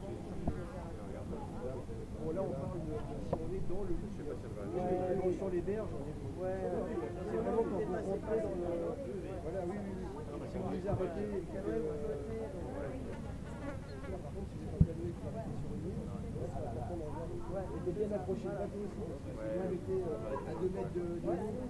Rue de La Muraille, Vions, France - Dans l'herbe
Dimanche à Vions près de la salle polyvalente lors de l'évènement code source proposé par bipolar. Quelques criquets dans l'herbe, les visiteurs et participants .
France métropolitaine, France, 18 September, ~15:00